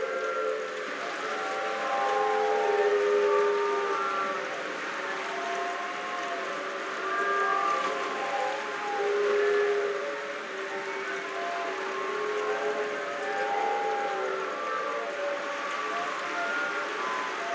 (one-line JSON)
{"title": "Koh Kwang - Rumors from the sea", "date": "2018-10-23 04:00:00", "description": "Rumors from the sea is a site specific sound installation composed of 130 bamboos, usually used in Thailand as seawalls to slow coastal erosion, with a flute at their top. A bamboo-flutes orchestra played by the waves, performing unique concerts 24 hours a day, depending of the tide, the direction, tempo and force of the waves. As a potential listener, you are invited to define the beginning and the end of the music piece played for you.\nProject done in collaboration with the Bambugu’s builders and the students of Ban Klong Muang School. We imagine together a creature that could come from the sea to help humans to fight climate change: the installation is a call to listen to it singing, it screaming, while it tries to stop the waves.", "latitude": "8.07", "longitude": "98.74", "altitude": "9", "timezone": "Asia/Bangkok"}